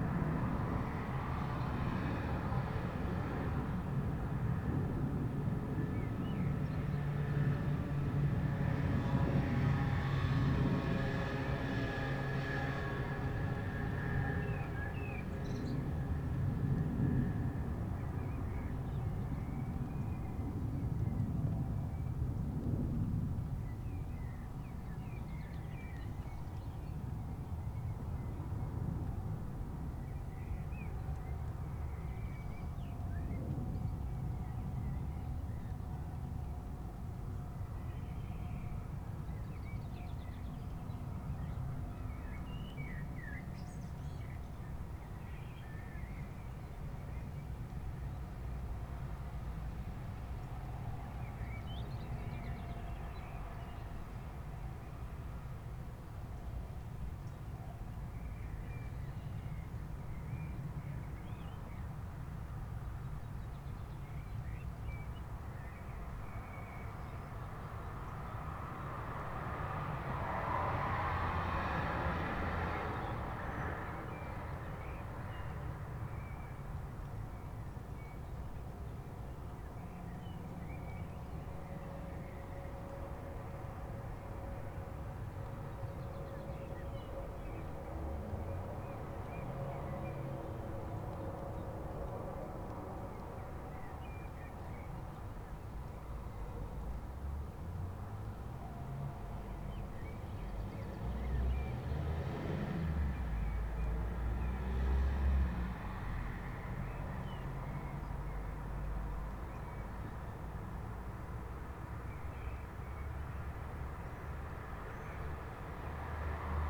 wermelskirchen, berliner straße: terrasse - the city, the country & me: terrasse
stupid friday evening traffic, birds
the city, the country & me: june 17, 2011
Wermelskirchen, Germany, 17 June 2011, 21:29